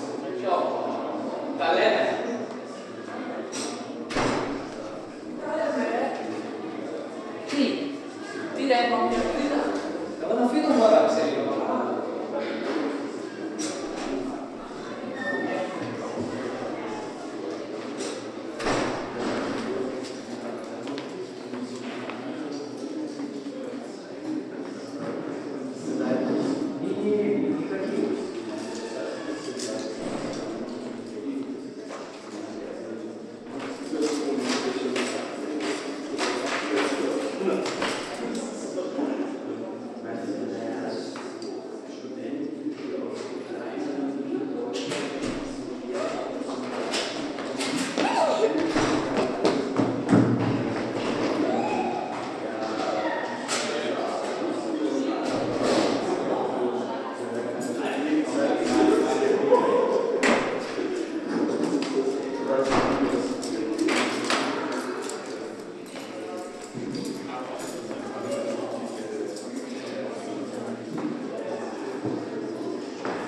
Gostenhof, Nuremberg, Germany - nachbarschaftshaus, neighbourhood's center
children running, free radio activists meeting, musicians preparing a concert, a clerk shutting a door; spielende kinder, freies radio treffen, musiker beim einspielen vor einem konzert im nachbarschaftshaus gostenhof